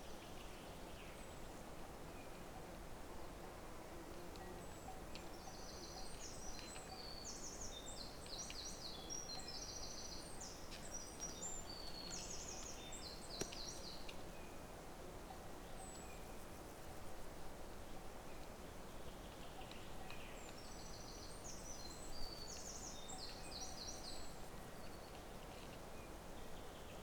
Zatolmin, Tolmin, Slovenia - Source of river Tolminka
In 2022 we have a severe drought. So no rain no water. Birds, stream in the distance and cow bell.
Lom Uši Pro, MixPre II